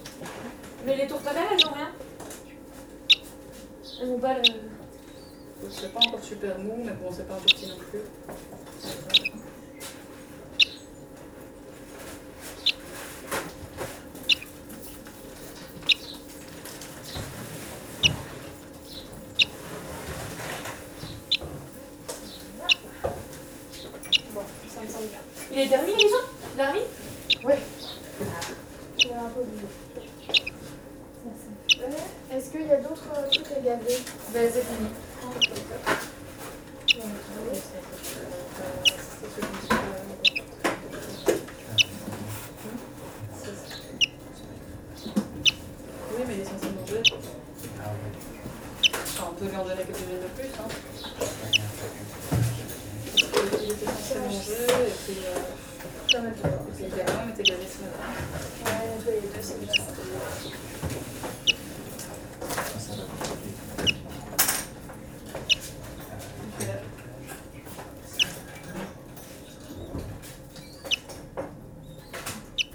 Ottignies-Louvain-la-Neuve, Belgique - Birdsbay, hospital for animals
Birdsbay is a center where is given revalidation to wildlife. It's an hospital for animals. Here, we can hear a lonely greenfinch, mixed in the common sounds of the daily life of the center. Strangely, he's doing the timepiece. But why ?
Ottignies-Louvain-la-Neuve, Belgium, 22 August